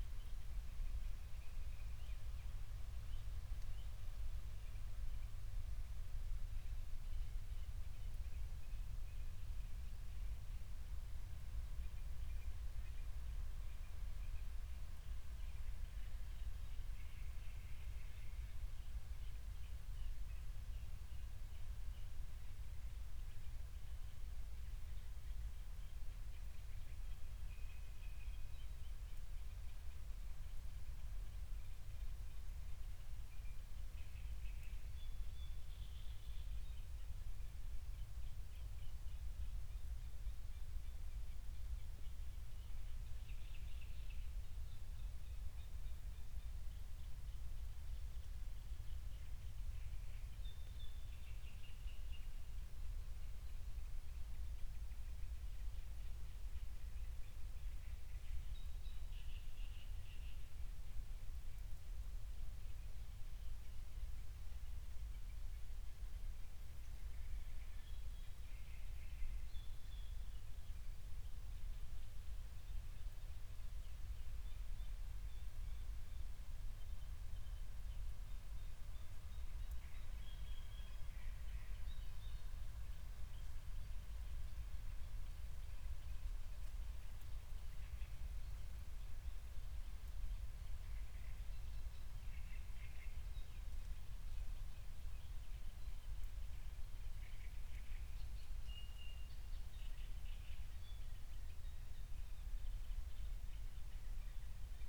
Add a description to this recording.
02:00 Berlin, Buch, Mittelbruch / Torfstich 1